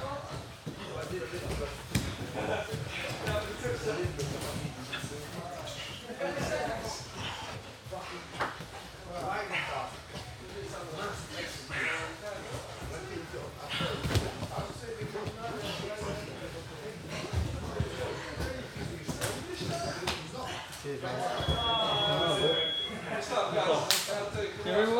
London Borough of Islington, Greater London, Vereinigtes Königreich - The MMA Clinic, St. Alban's Place 29-30 - Combat training
The MMA Clinic, St. Alban's Place 29-30 - Combat training. Several men grappling on the ground, gasping, trainer's commands, then a break and goodbyes.
[Hi-MD-recorder Sony MZ-NH900 with external microphone Beyerdynamic MCE 82]